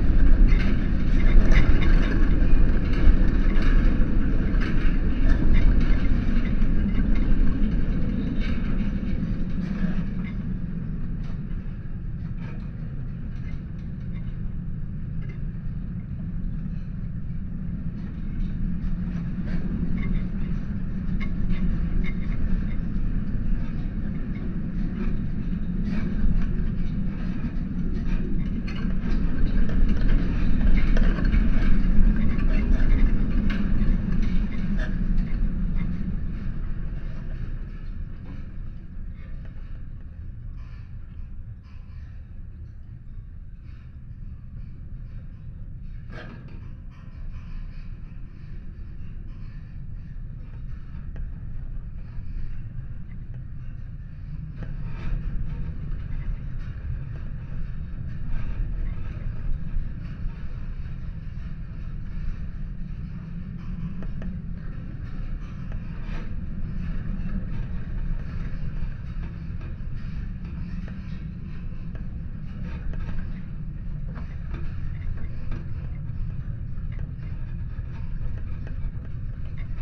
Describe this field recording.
contact mick'ed fence of fallow-deers park